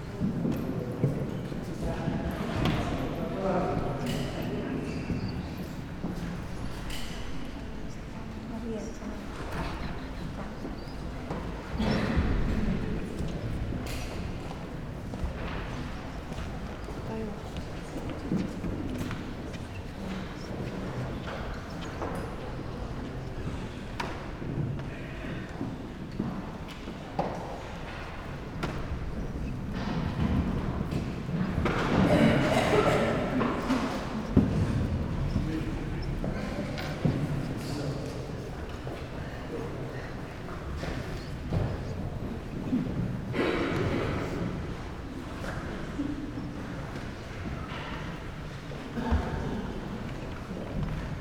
sonic scape while people gather, wooden benches, coughs, snuffle ...